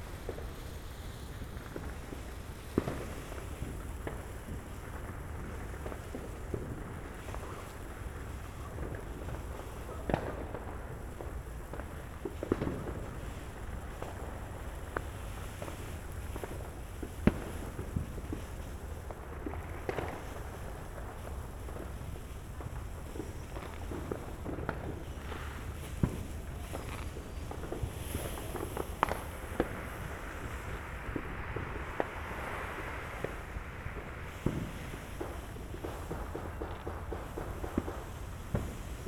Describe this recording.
Binaural: New Year's Eve in front of my house with my wife, good friend and a few neighbors. I whisper to my friend to watch the time before loosing some fireworks of our own, while the city erupts with explosions. CA14 omnis > DR 100 MK2